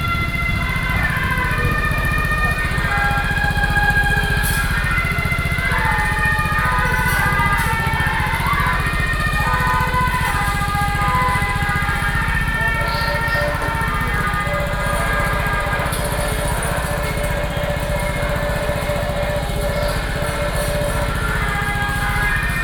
Walking through the small streets, Traditional temple festivals, Sony PCM D50 + Soundman OKM II